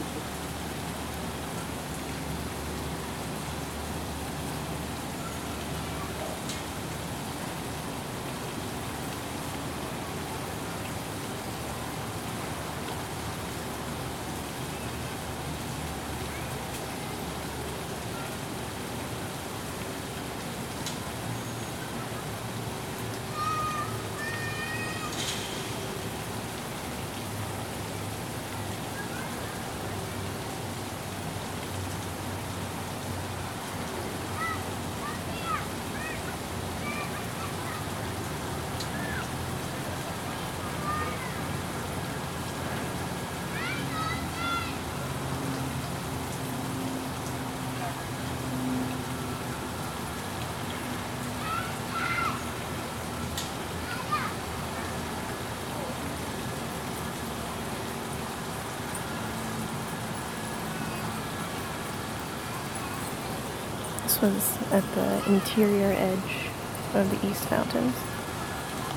Lake Shore East Park East Water Garden From Bottom of Main Stair Case
Recorded on Zoom H4N. This is recorded at the bottom of the main staircase in Lake Shore East Park. To the right of the grand staircase are the Eastern Water Gardens and the playground. This entrance is described as the main entrance for the residents of this community. Please notice how it contrasts with the Western Water Gardens.
8 June 2017, 14:00